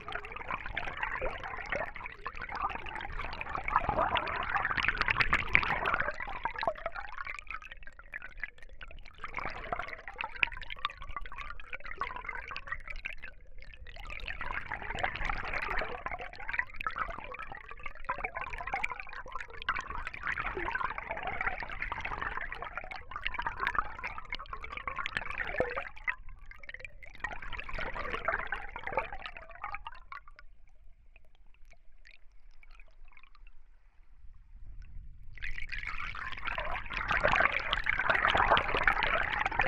Filotas, Greece - Hydrophone
Record by: Alexandros Hadjitimotheou
Περιφέρεια Δυτικής Μακεδονίας, Αποκεντρωμένη Διοίκηση Ηπείρου - Δυτικής Μακεδονίας, Ελλάς, 20 January 2022